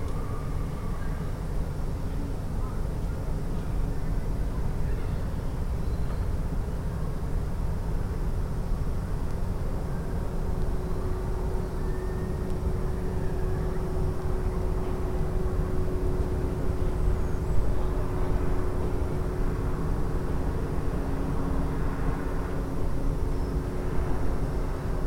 Bedford Railway Station, Platform 4.